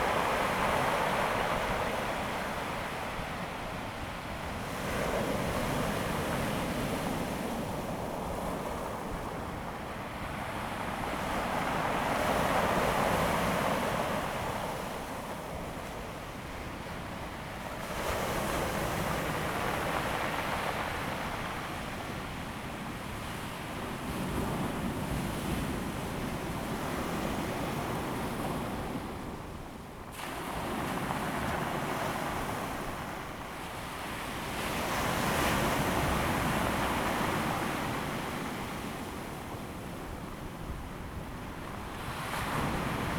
南興村, Dawu Township - Sound of the waves
Sound of the waves, The weather is very hot
Zoom H2n MS +XY